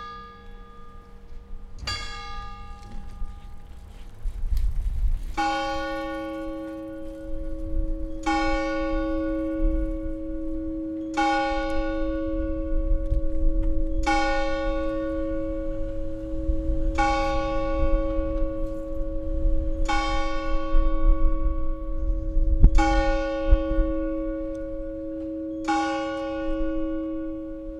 leipzig, nathanaelkirche, 12 uhr
1. september 2011, 12 uhr mittags läutet die nathanaelkirche.
Leipzig, Deutschland, September 2011